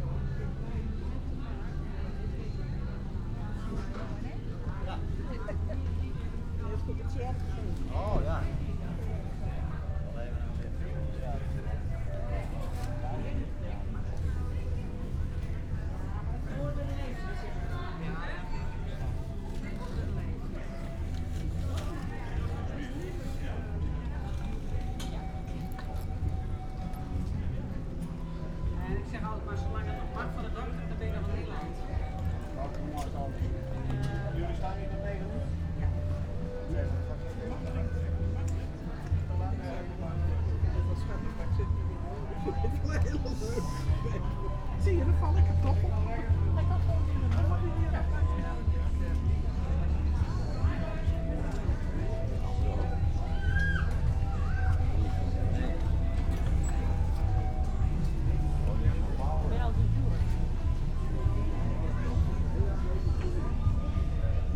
balk: radhuisstraat/van swinderen straat - the city, the country & me: sound walk
evening market, sound walk
the city, the country & me: july 24, 2015